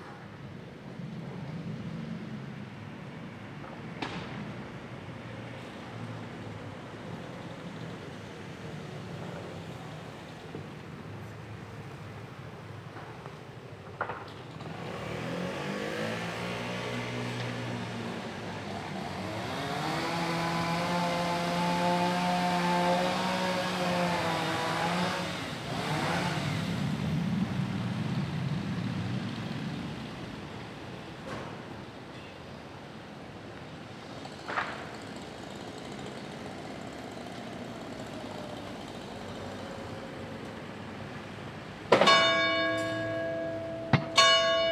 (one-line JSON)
{"title": "Vauban, Marseille, Frankreich - Marseille, Boulevard Vauban on the corner of Rue de la Guadeloupe - Street setting, building site, church bell", "date": "2014-08-12 14:55:00", "description": "Marseille, Boulevard Vauban on the corner of Rue de la Guadeloupe - Street setting, building site, church bell.\n[Hi-MD-recorder Sony MZ-NH900, Beyerdynamic MCE 82]", "latitude": "43.28", "longitude": "5.37", "altitude": "89", "timezone": "Europe/Paris"}